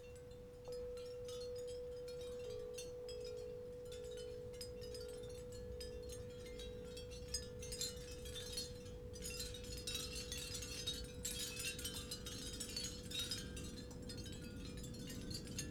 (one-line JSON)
{"title": "Wind & Tide Playground - Windchimes & Dogs", "date": "2020-09-01 09:38:00", "description": "I love reading on the deck, to the sound of oystershell windchimes in the gentle morning breeze. Sometimes the wind kicks up high enough to engage the big 55\" Corinthian Bells windchimes. Inside, Desi alerts to somebody walking by on the street and has to come out to sniff the air.", "latitude": "47.88", "longitude": "-122.32", "altitude": "120", "timezone": "America/Los_Angeles"}